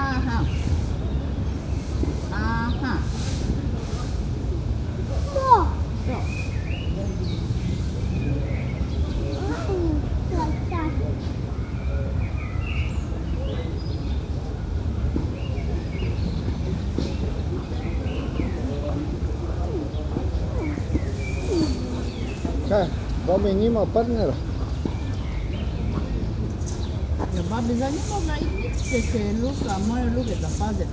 petanque ground at the city stadium, Maribor, Slovenia - preparing the grounds
old men with rakes prepare the gravel surface of the petanque grounds, tennis is player out of view just over the fence, and a small child converses with his parents.